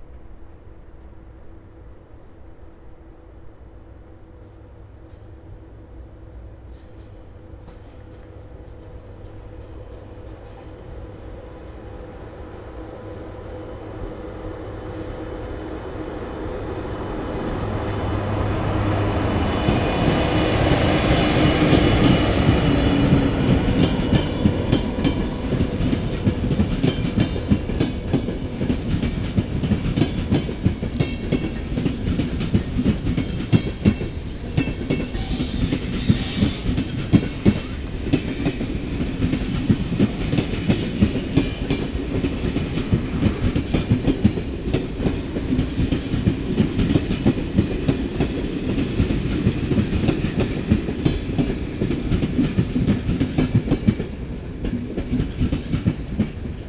Dobšinského, Bratislava, Slovakia - Trains at Bratislava Main Station
Night express train entering Bratislava Main Station.